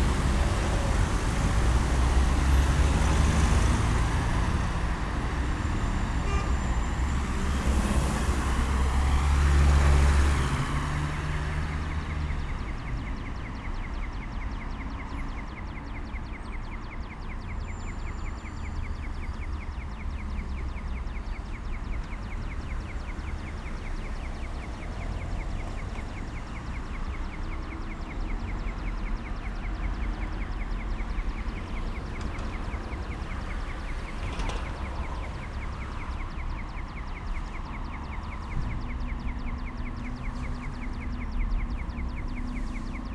Pza. Legazpi, traffic
traffic lights, cars passing